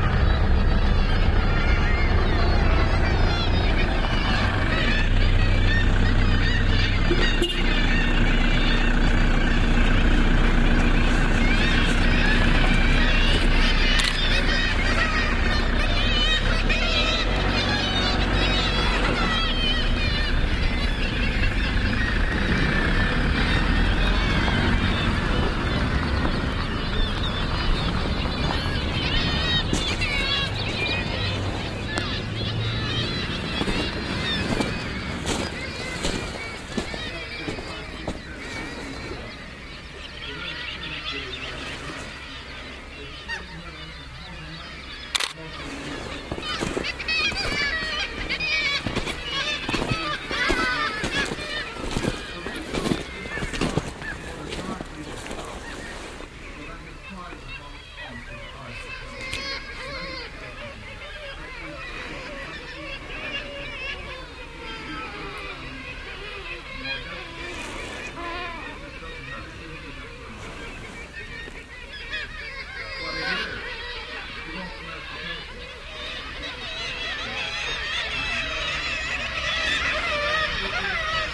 Pyramiden is a russian mining town which once had a population of over 1,000 inhabitants, [1] but was abandoned on 10 January 1998 by its owner, the state-owned Russian company Arktikugol Trust. It is now a ghost town. Within the buildings, things remain largely as they were when the settlement was abandoned in a hurry. The place is about to re-open as a turist attraction. I went on a boat trip to Pyramiden and because the danger of polar bears, I had to stick to the group and there were no time for recordings. These recordings are from outside the Wodka bar at the Hotel, where thousends of Kittiwakes had sqatted one of the abandonned buildings.